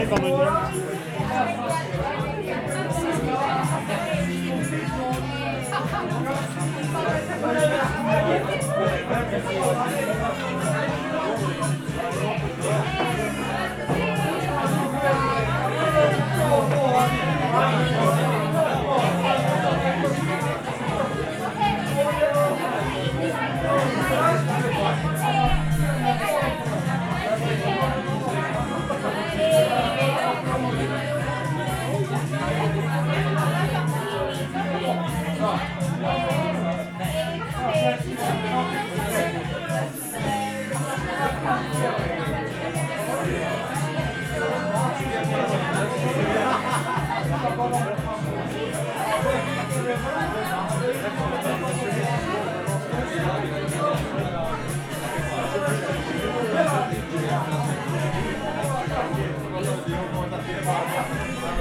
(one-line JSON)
{"title": "Krutenau, Strasbourg, France - AtlanticoBAR", "date": "2014-03-18 23:49:00", "description": "Night Recording on \"Atlantico Café\"", "latitude": "48.58", "longitude": "7.76", "timezone": "Europe/Paris"}